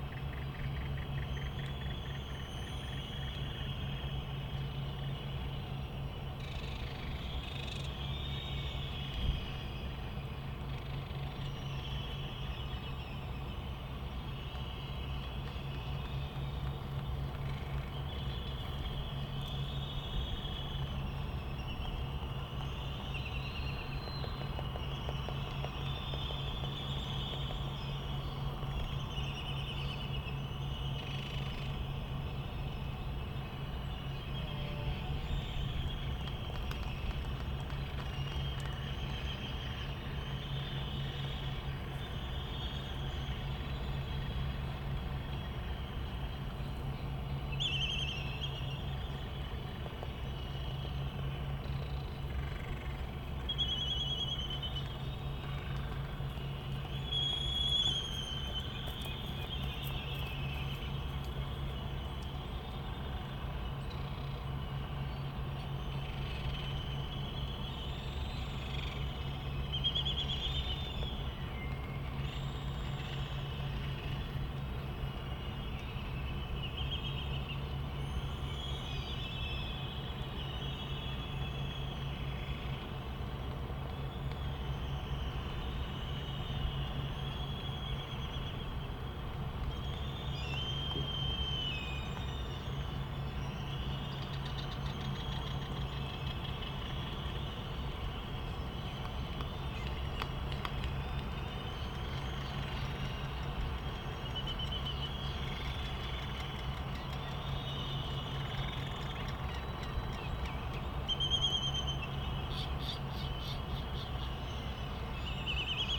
United States Minor Outlying Islands - Laysan albatross soundscape ...
Sand Island ... Midway Atoll ... soundscape ... laysan albatross ... white terns ... black noddy ... bonin petrels ... Sony ECM 959 one point stereo mic to Sony Minidisk ... background noise ...